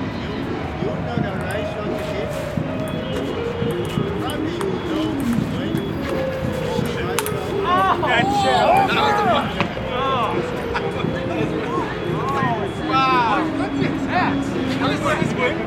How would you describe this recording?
A ping pong match at Bryant Park.